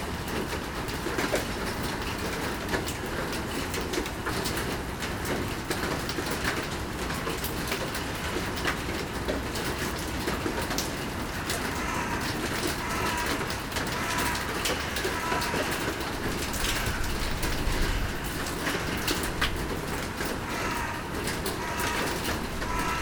In the abandoned coke plant, walking in the "tar" section of the factory, while rain is falling. Everything here is dirty and polluted.
Seraing, Belgium